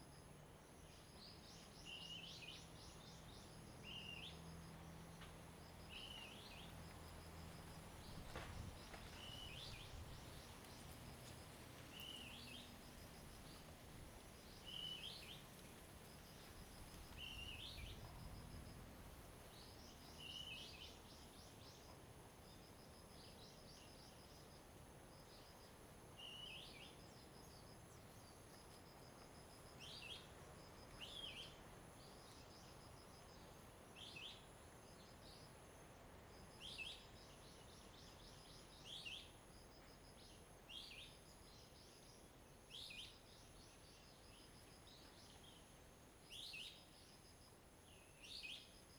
Ponso no Tao, Taitung County - Birds singing
Birds singing, In the woods, Wind
Zoom H2n MS +XY